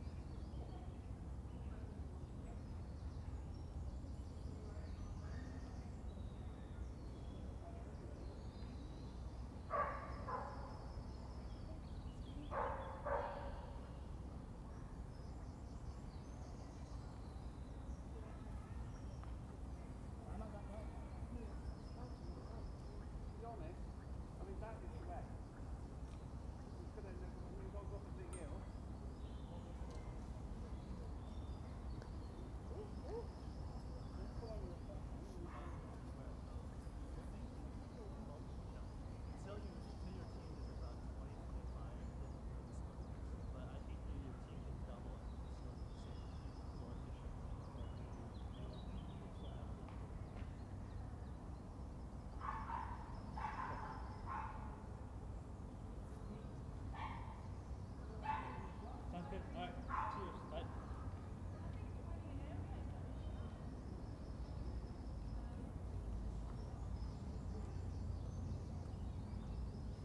Hampstead Heath, London - Hampstead Heath
Near the big dead tree
19°C
6 km/hr 120